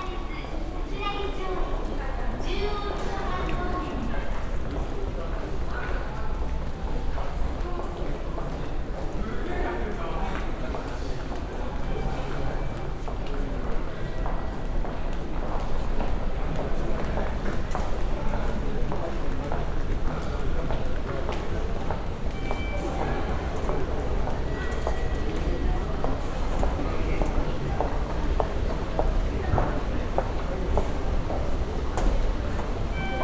yokohama, walk way to sakuragi subway station
On the walk way to the sakuragi subway station. An automatic japanese voice, the sound of the moving staircase a regular beeping warning sign and passengers
international city scapes - topographic field recordings and social ambiences
30 June 2011